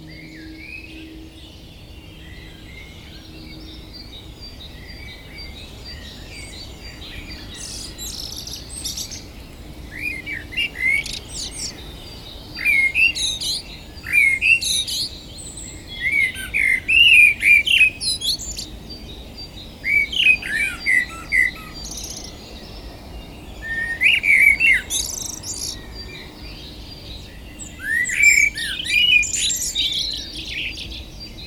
Saint-Martin-le-Vinoux, France - Dawn chorus
We are sleeping outside, in the garden of an abandoned house, partially destroyed because of a large collapse into the underground mine. Before waking up, I recorded the dawn chorus. At the end of the recording, the clock is ringing, it's time to wake up.